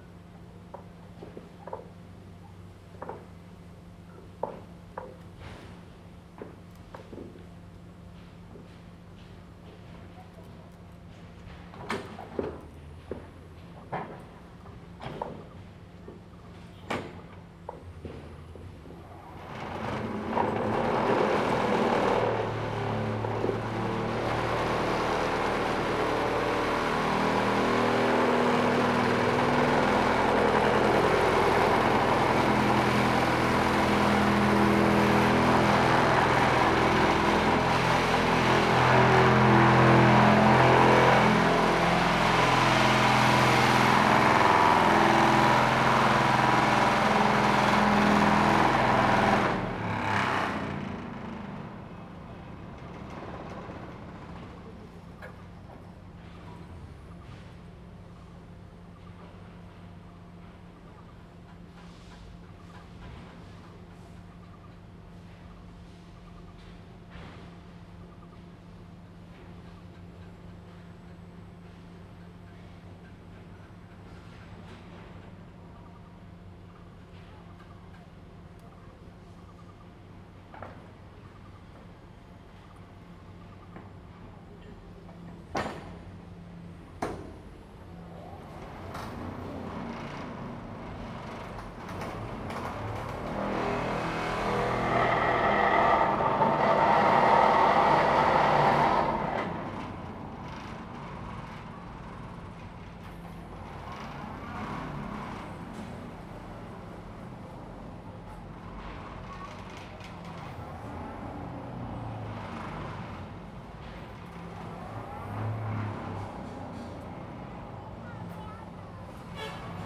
In the Park, Sound from the construction site
Zoom H2n MS+ XY